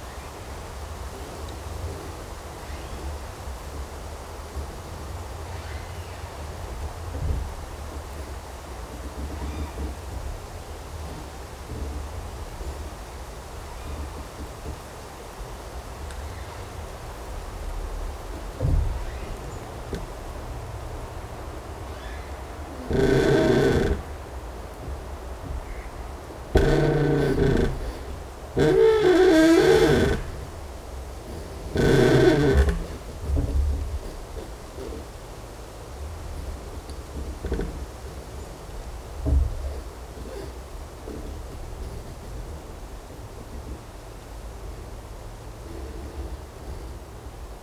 Creaking tree 1 internal and external, Vogelsang, Zehdenick, Germany - Creaking tree 1 internal and external sounds mixed
There is also a lovely deep bass from wind blowing through the upper branches and leaves even when it is not creaking.
The contact mics are simple self made piezos, but using TritonAudio BigAmp Piezo pre-amplifiers, which are very effective. They reveal bass frequencies that previously I had no idea were there.